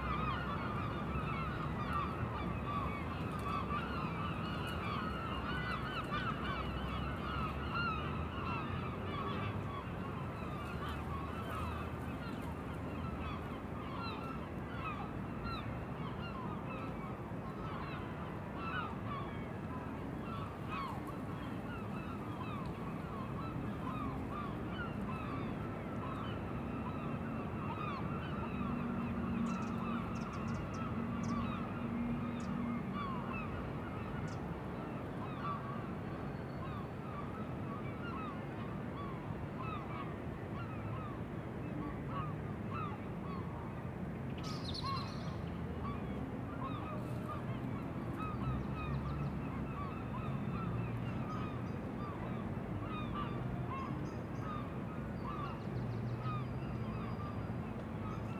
The songs of the seagulls during their evening congregation over the Royal Pavilion. The occasional rattle that can be heard to the left comes from the leaves of a nearby palm tree.
The City of Brighton and Hove, UK, 31 March 2015, ~19:00